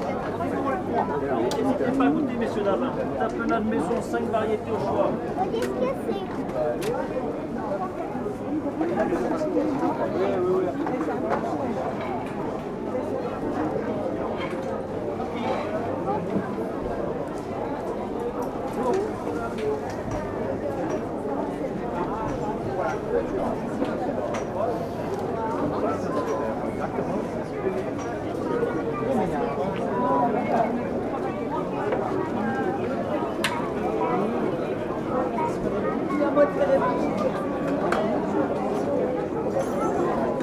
Ganges, France - Market
The local market in Ganges. This is a very huge market, people come from far to stroll here.
6 May 2016